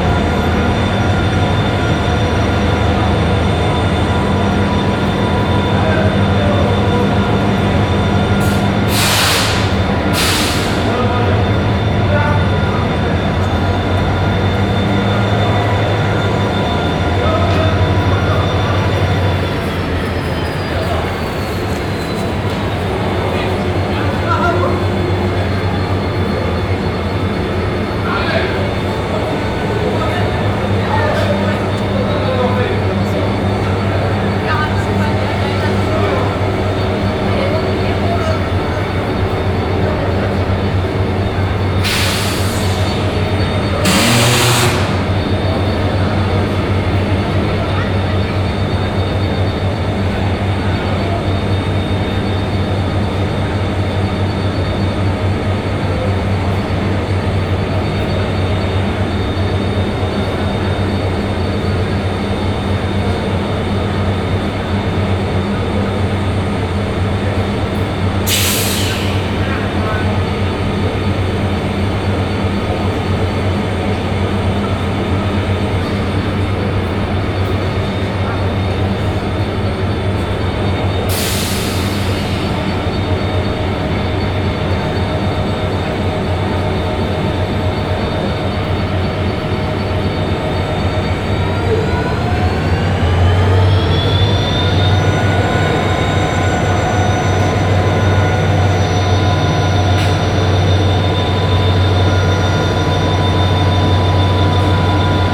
Tunis Centre, Tunis, Tunesien - tunis, main station, two trains
Standing between two train tracks at the main station. A long recording of two old trains standing at the tracks of the terminus with running engines making funny air release sounds. A third train arrives slowly driving backwards. A train service engineer positions some metal poles at the train track.
international city scapes - social ambiences and topographic field recordings